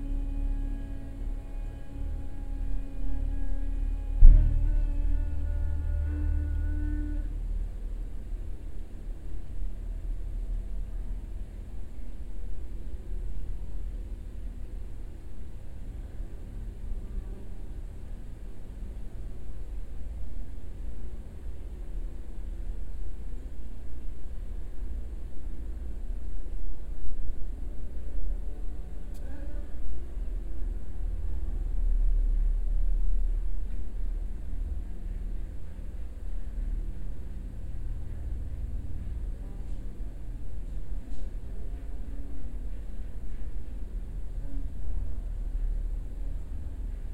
Salos, Lithuania, in the tube (amplified)
some tube for rain water on the ground. small microphones in it. amplified silence.